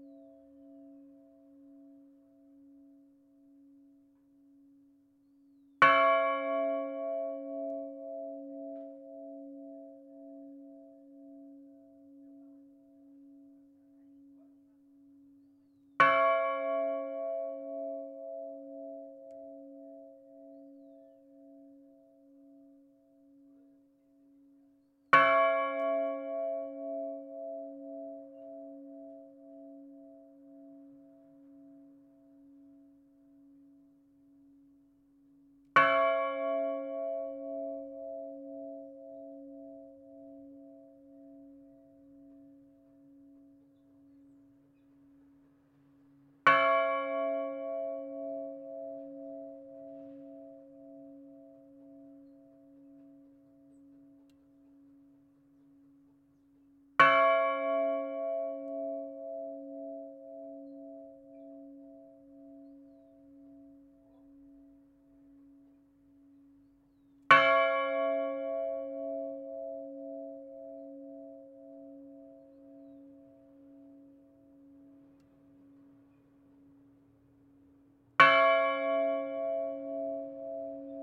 l'Église, Pl. de l'Église, Thérouanne, France - église de Therouanne (Pas-de-Calais) - clocher
église de Therouanne (Pas-de-Calais) - clocher
cloche 3 - la plus aigüe - tintement manuel
France métropolitaine, France, 21 March 2022